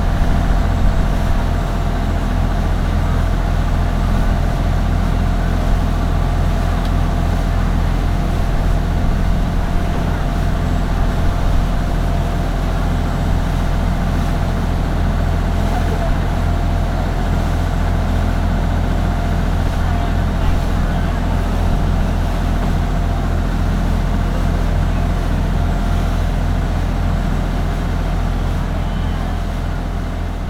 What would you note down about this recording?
Bangkok, Chao Phraya River, on a boat.